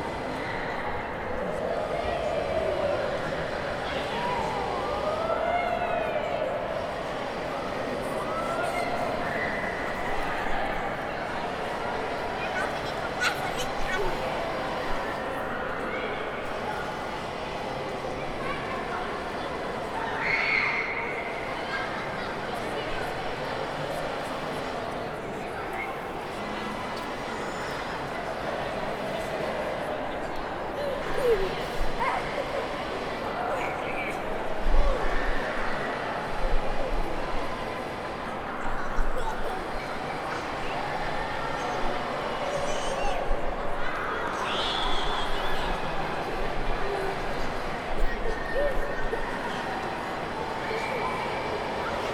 Tate Modern, London, UK - Turbine Hall - Superflex One Two Three Swing.
Turbine Hall - Superflex One Two Three Swing installation.
Recorded from directly under the large swinging silver ball in the massive Turbine Hall. Many children having great fun on the huge swings.
Recorded on a Zoom H5.